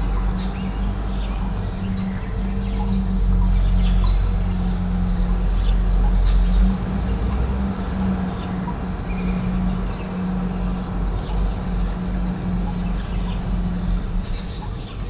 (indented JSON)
{
  "title": "Piazza della Pace, Sassoleone BO, Italy - Sassoleone Piazza della pace ambience",
  "date": "2019-04-24 16:20:00",
  "description": "Sassoleone Piazza della pace ambience, recorded with a Sony PCM-M10",
  "latitude": "44.26",
  "longitude": "11.48",
  "altitude": "438",
  "timezone": "Europe/Rome"
}